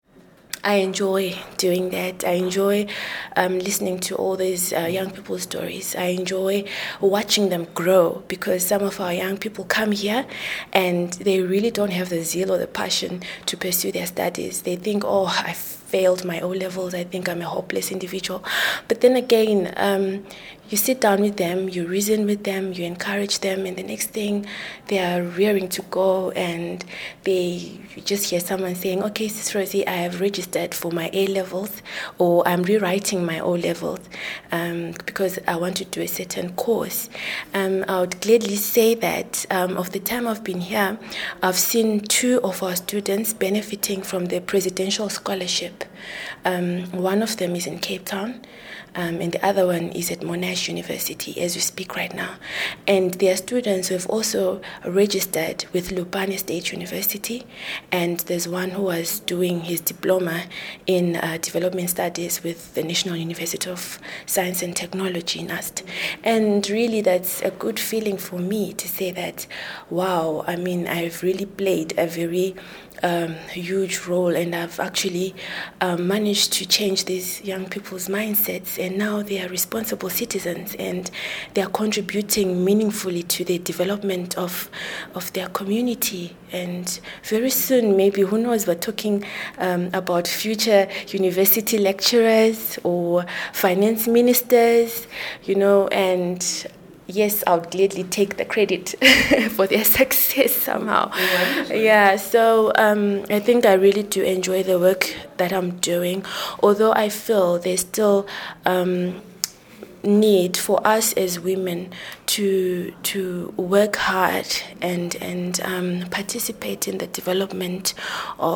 floor Pioneer House, Bulawayo, Zimbabwe - inside Radio Dialogue Studios
Rosie Ndebele, the station's youths coordinator talks about her work with young people, and the important role women play in society…